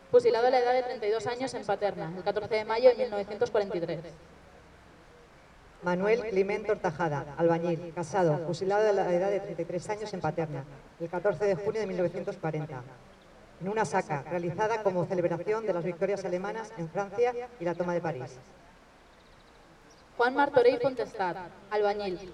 Burjasot, Valencia, España - Acto por la República
Acto por la República